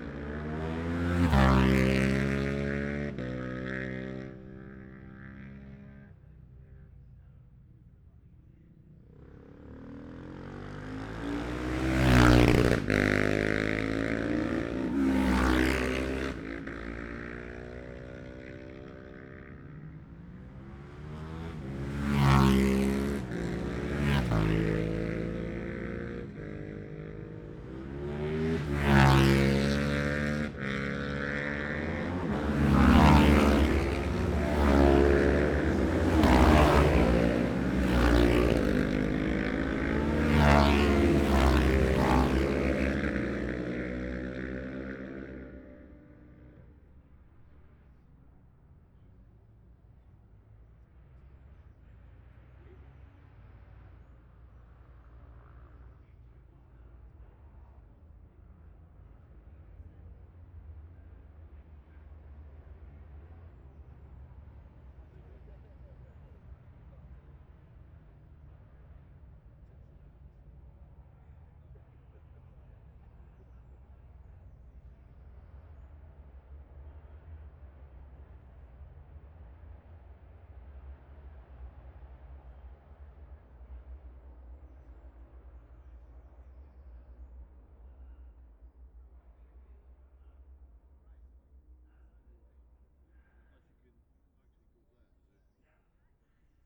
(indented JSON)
{
  "title": "Jacksons Ln, Scarborough, UK - olivers mount road racing 2021 ...",
  "date": "2021-05-22 10:32:00",
  "description": "bob smith spring cup ... twins group B practice ... luhd pm-01 mics to zoom h5 ...",
  "latitude": "54.27",
  "longitude": "-0.41",
  "altitude": "144",
  "timezone": "Europe/London"
}